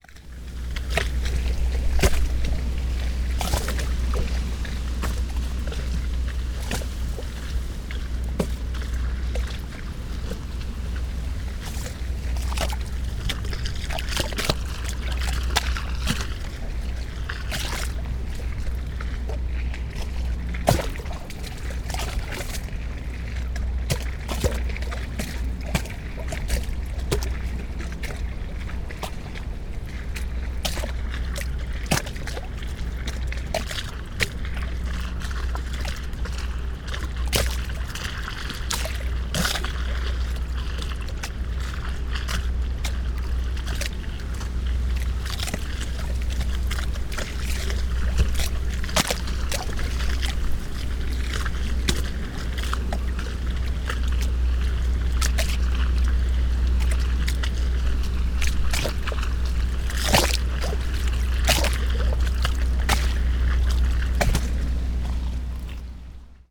willow tree, Treptower park, Berlin, Germany - waves, wind through mourning willow tree
branches touching river Spree surface
Sonopoetic paths Berlin